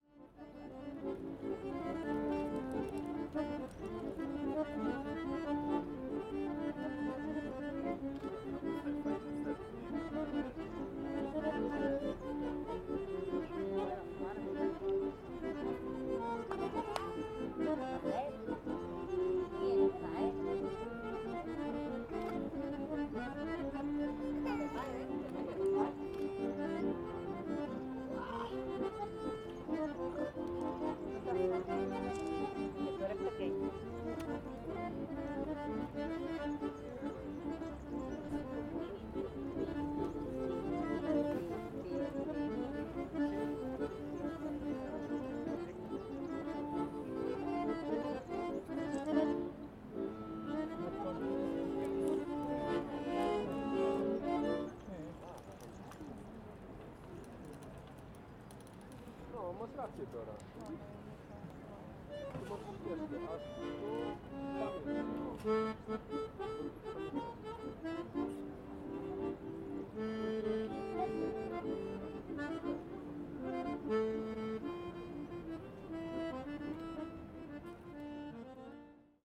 A lousy accordion player disturbing the beautiful view of hills surrounding Ronda. Birds, tourists and women playing with their children. Recorded with Zoom H2n.